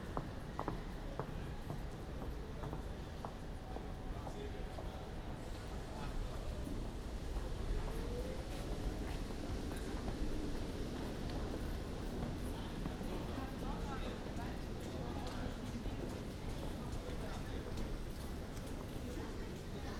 Arrivals and departures of tramcars in the Tramtunnel.
Recorded as part of The Hague Sound City for State-X/Newforms 2010.